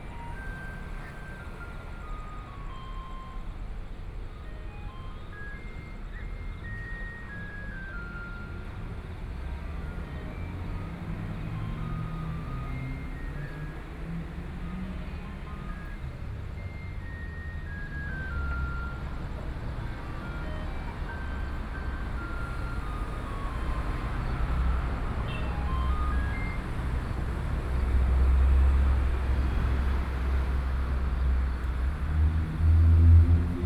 倉前路, 羅東鎮信義里 - Trains traveling through
In the nearby railroad tracks, Traffic Sound, Trains traveling through, Birds